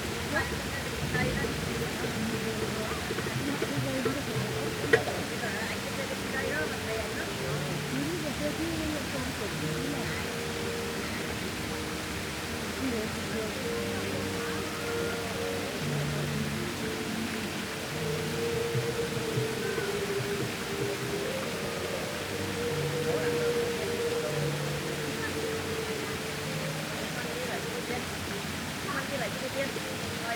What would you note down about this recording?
During all the building work of 2021 the 'Friendship of the Peoples' fountain remains Alexanderplatz's focal point. Tourists from all nations still group here, sitting around the edge, chatting, looking at maps, checking phones, deciding what next. The fountain water fizzes. Several musicians play. Rock ballads, classical music, Arabic drumming. It is a warm day and the reverberant acoustics are soupy, made less clear by hums, whines and bangs from the building site. An older man, slightly drunk, very briefly strokes the fake fur of my microphone wind shield, and walks on. Friendship?!